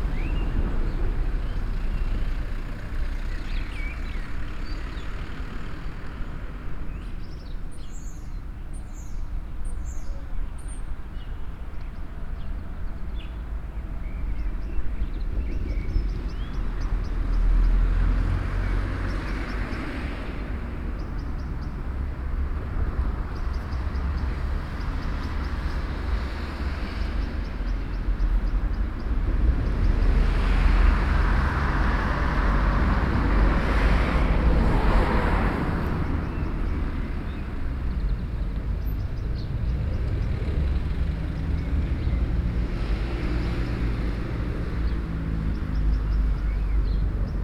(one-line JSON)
{"title": "Beynost, Place de la Gare, an old man walking slowly to his car", "date": "2009-03-18 15:27:00", "description": "An old man walking slowly, then going away with his car.\nPCM-D50, SP-TFB-2, binaural.", "latitude": "45.83", "longitude": "5.01", "altitude": "182", "timezone": "Europe/Paris"}